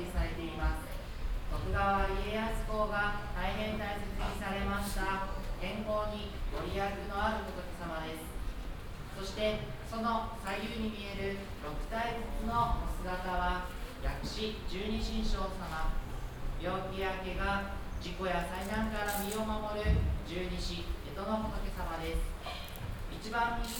inside the dragon temple - a ceremony monk describing the function of the room and demonstrating the dragon echo effect
international city scapes and topographic field recordings
22 August